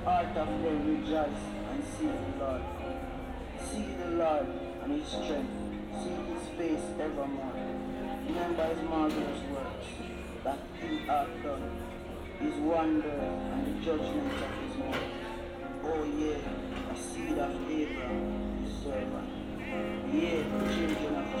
Piazza Giuseppe Verdi, Bologna BO, Italia - A boy from Gambia listens to a Jamaican pentecostal preacher on his boombox
A boy from Gambia listens to a Jamaican pentecostal preacher on his boombox